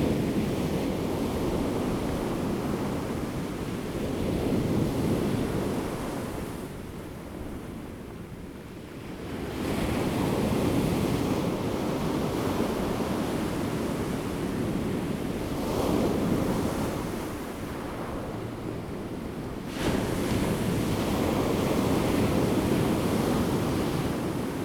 {"title": "Daren Township, Taitung County - Sound of the waves", "date": "2014-09-05 14:00:00", "description": "Sound of the waves, The weather is very hot\nZoom H2n MS +XY", "latitude": "22.29", "longitude": "120.89", "altitude": "1", "timezone": "Asia/Taipei"}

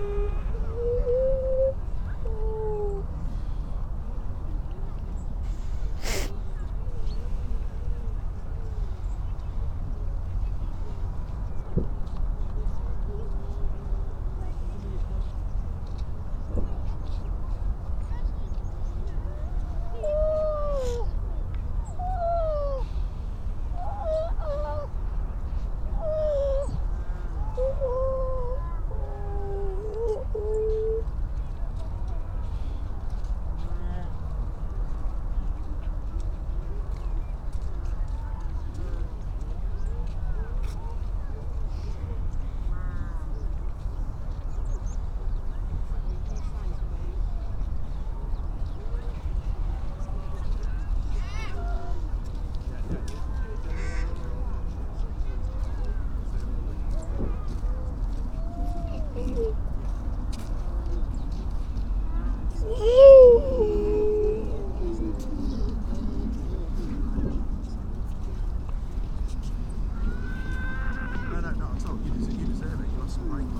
{"title": "Unnamed Road, Louth, UK - grey seals ... donna nook ...", "date": "2019-12-03 10:35:00", "description": "grey seals ... donna nook ... generally females and pups ... SASS ... bird calls ... pied wagtail ... skylark ... dunnock ... rock pipit ... crow ... all sorts of background noise ... sometimes you wonder if the sound is human or seal ..? amazed how vocal the females are ...", "latitude": "53.48", "longitude": "0.15", "altitude": "1", "timezone": "Europe/London"}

